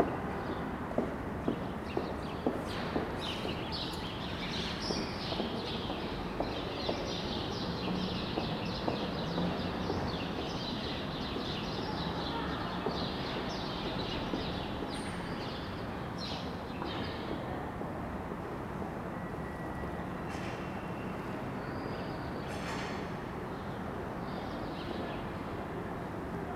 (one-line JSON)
{"title": "Salesiani, Torino, Italy - Ambience of the Salesiani courtyard", "date": "2015-03-19 07:23:00", "description": "Birds, traffic, and echoey voices and footsteps.", "latitude": "45.08", "longitude": "7.68", "altitude": "239", "timezone": "Europe/Rome"}